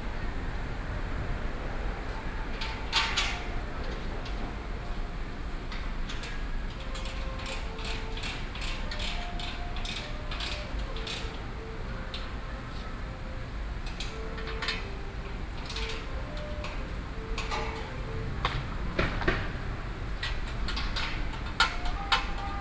Beitou Hot Springs Museum - Erection stage